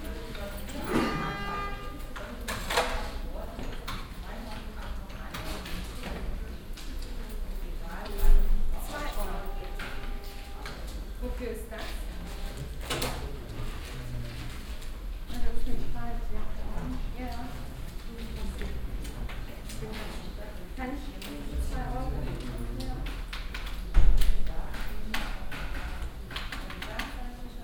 cologne, kalk, robertstrasse, local post office
people standing in a row waiting at local post office in the early afternoon
soundmap nrw social ambiences/ listen to the people - in & outdoor nearfield recordings